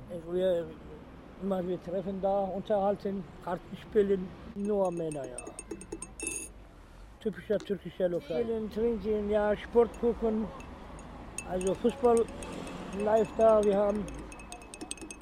old man talking about a kulturverein
Berlin, Germany, 19 April 2011, 10:59